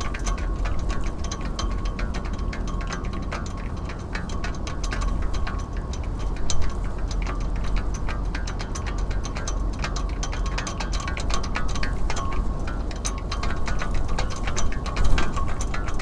Deba, Ritto, Shiga Prefecture, Japan - Flagpoles in Wind
Ropes banging against metal flagpoles in a moderate north wind along the running track at Yasugawa Sports PArk. The Shinkansen passes twice during the recording.